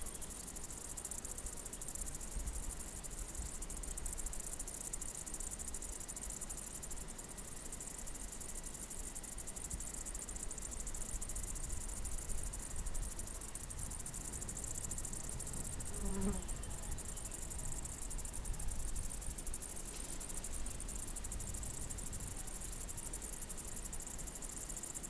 Salto de Castro, Paradela, Portugal - Salto de Castro
Salto de Castro, ambiencia diurna. Mapa sonoro do Rio Douro. Soundscape of Slato de Castro. Here the Douro meets Portugal for the first time. Douro River Sound Map
Zamora, Spain, 12 July 2010